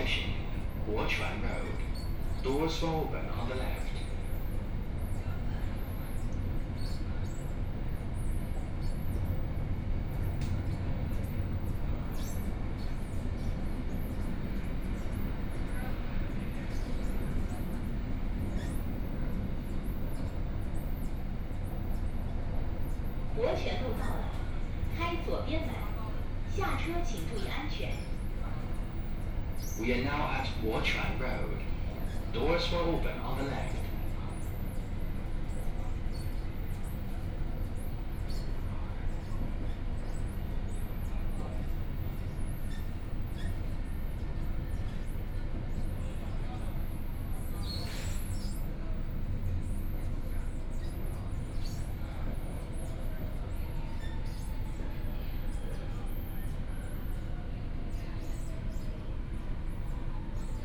Line10 (Shanghai Metro), from Wujiaochang Station to North Sichuan Road station, Binaural recording, Zoom H6+ Soundman OKM II
Hongkou, Shanghai, China, 21 November 2013